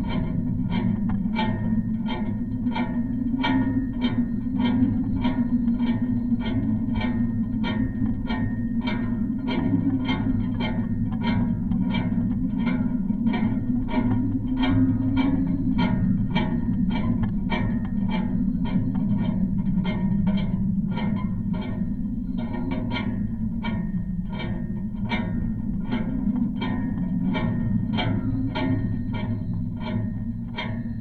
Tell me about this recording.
contact microphones on the flag pole of Kos castle